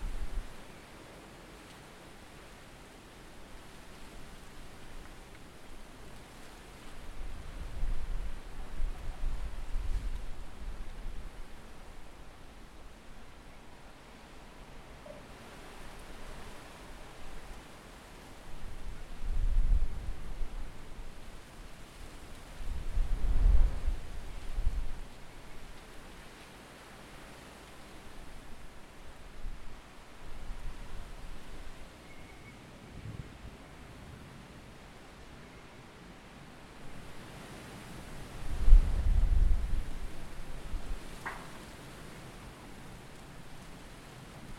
Επαρ.Οδ. Φιλώτας - Άρνισσα, Αντίγονος 530 70, Ελλάδα - Storm
Record by: Alexandros Hadjitimotheou